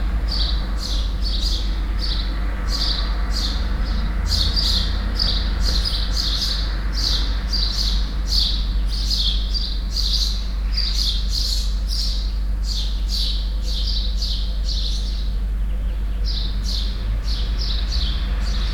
Cahors, Rue du Portail Alban.
Birds and Bells, a few cars.
Cahors, France, 12 June, ~08:00